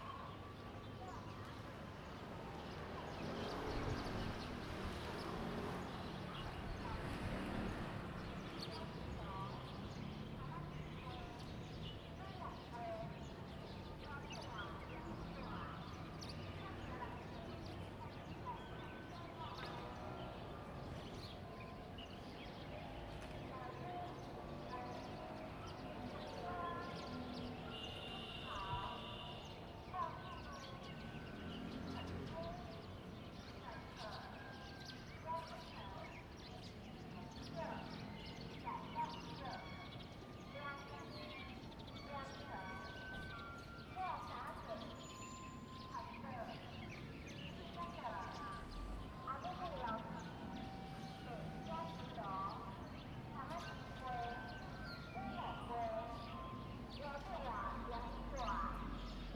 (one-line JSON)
{"title": "Fanshucuo, Shueilin Township - Small village", "date": "2015-02-18 08:45:00", "description": "Small village, the sound of birds\nZoom H2n MS +XY", "latitude": "23.54", "longitude": "120.22", "altitude": "6", "timezone": "Asia/Taipei"}